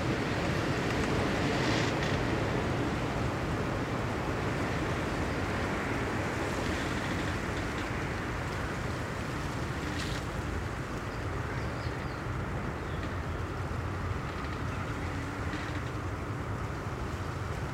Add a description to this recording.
I know this old willow tree from my teens. It was looking monstrous even then and now, after all years, the tree is starting to fall apart. Several gigantic branches are broken and lay on the ground. It's dangerous to stand under the willow in windy days because you don't know what branch will break and fall down. Maybe some day I will not find the willow standing, so today I have recorded it in the wind. Just placed small mics in the cracks in the bark....